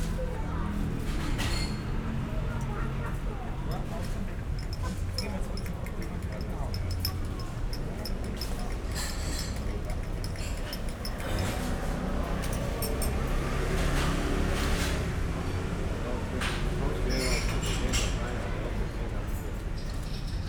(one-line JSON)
{"title": "Via di Cavana, Trieste, Italy - outside coffee bar ambience", "date": "2013-09-07 16:15:00", "description": "afternoon ambience in front of a typical coffee bar\n(SD702, DPA4060)", "latitude": "45.65", "longitude": "13.77", "altitude": "14", "timezone": "Europe/Rome"}